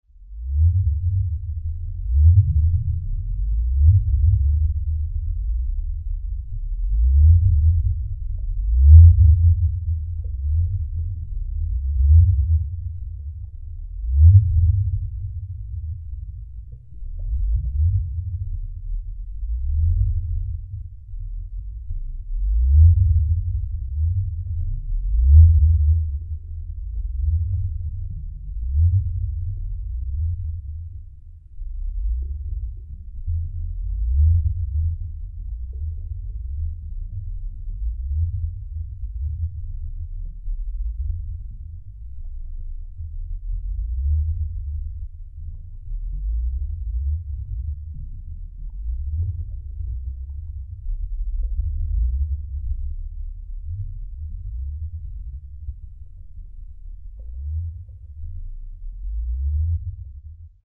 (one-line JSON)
{"title": "bärwalder see, klitten harbour, water sound of the lake - bärwalder see, hydrophon recording", "date": "2009-11-26 20:40:00", "description": "hydrophon underwater recording at the bärwalder lake during the transnaturale 2009\nsoundmap d - social ambiences &\ntopographic field recordings", "latitude": "51.36", "longitude": "14.56", "altitude": "116", "timezone": "Europe/Berlin"}